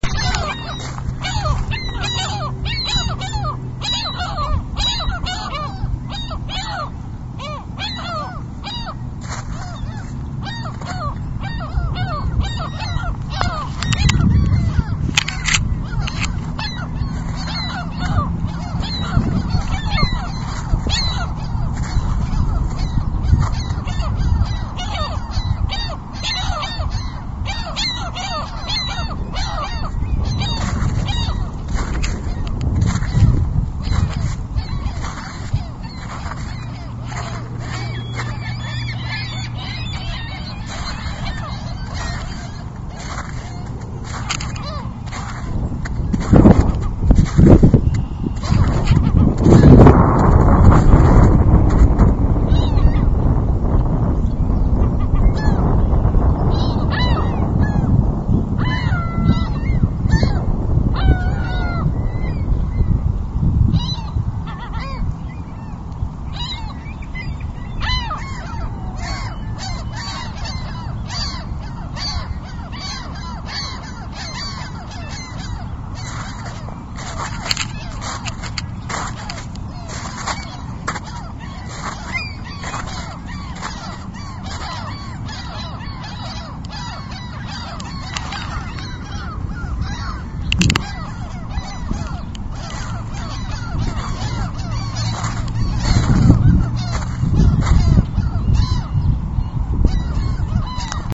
Montreal: St. Lawrence River at Verdun - St. Lawrence River at Verdun
equipment used: IRiver IFT-300 MP3 Player
Seagulls screaming on the ice of freezing St. Lawrence River at Verdun
QC, Canada, 15 February 2006, 17:00